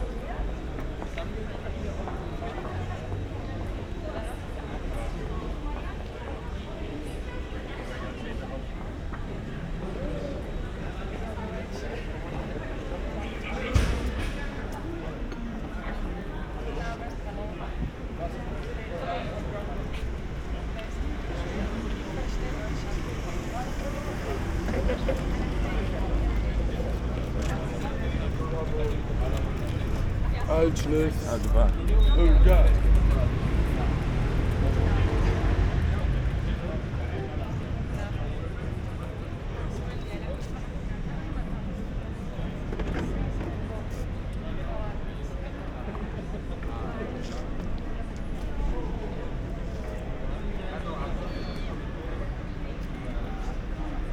Rue Beaumont, Brasserie Vis à Vis, sitting outside a the street corner, weekend ambience
(Olympus LS5, Primo EM172)